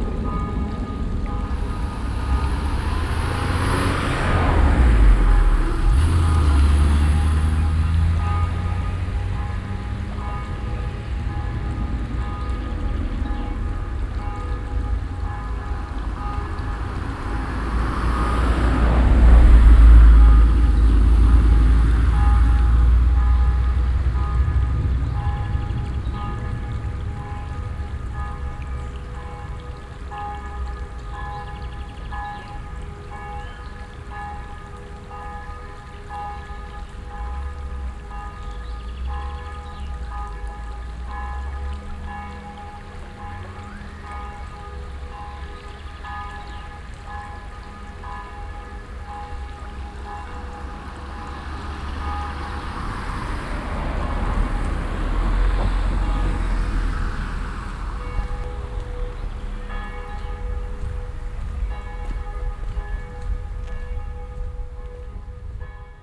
overath, immekepplerteich, small bridge, bells - overath, immekepplerteich, small bridge, bells 02
früher abend, auf brücke über kleinem fluss, wasserplätschern, flugzeugüberflüge (anflugschneise flghf köln/ bonn), kirchglocken, strassenverkehr
soundmap nrw - social ambiences - sound in public spaces - in & outdoor nearfield recordings